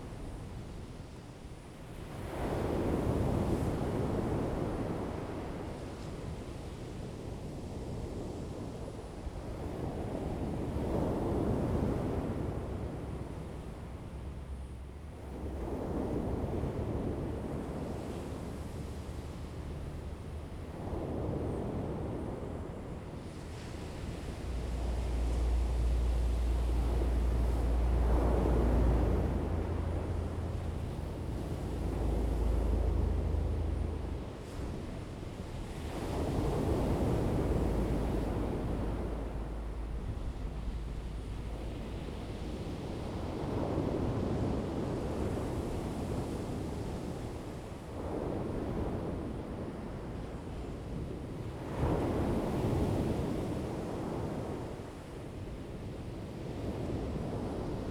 大鳥村, Dawu Township - Sound of the waves
In the beach, Sound of the waves, The weather is very hot
Zoom H2n MS +XY